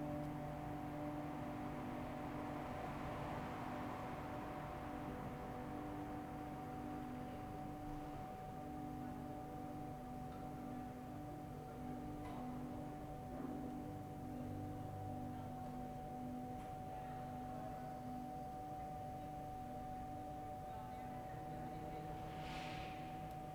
Ascolto il tuo cuore, città. I listen to your heart, city. Several chapters **SCROLL DOWN FOR ALL RECORDINGS** - Night with Brian Eno video in background in the time of COVID19 Soundscape
"Night with Brian Eno video in background in the time of COVID19" Soundscape
Chapter CXL of Ascolto il tuo cuore, città. I listen to your heart, city
Wednesday November 11th 2020. Fixed position on an internal terrace at San Salvario district Turin, fifth day of new restrictive disposition due to the epidemic of COVID19.
On the terrace I was screening video “Brian Eno - Mistaken Memories Of Mediaeval Manhattan”
Start at 10:41 p.m. end at 11:06 p.m. duration of recording 25’05”